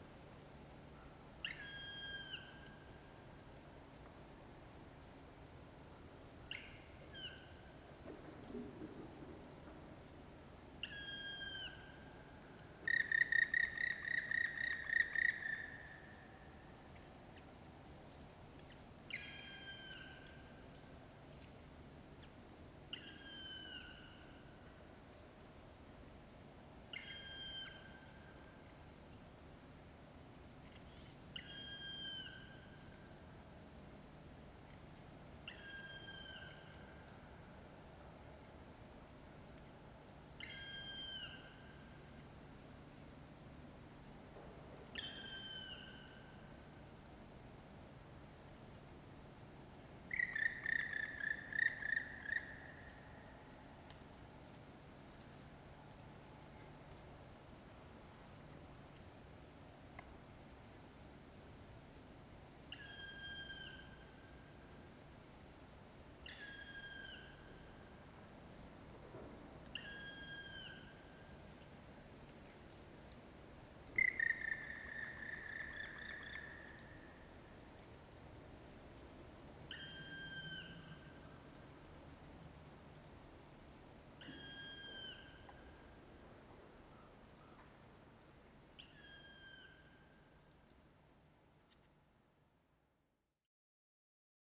Vyžuonos, Lithuania, black woodpecker
the clearings...lonely black woodpecker